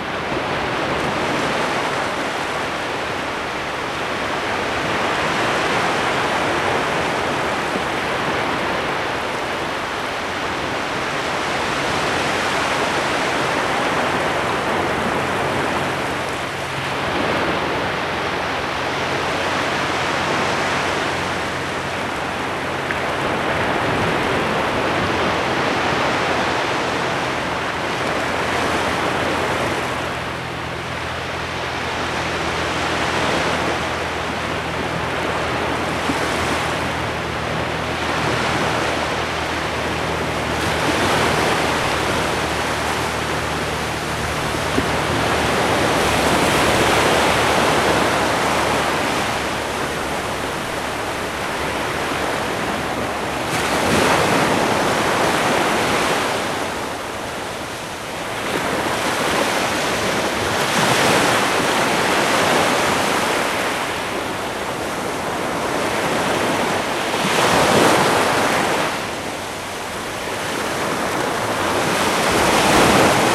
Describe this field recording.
Plage de lEspiguette, minidisc recording from 1999.